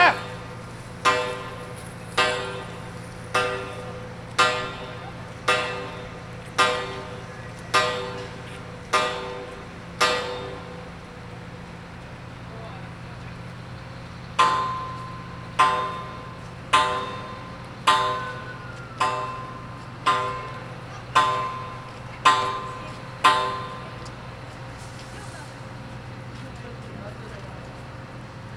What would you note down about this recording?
equipment used: Marantz PMD670 recorder with 2 Audio Technica Pro31, Construction at Concordia's Hall Building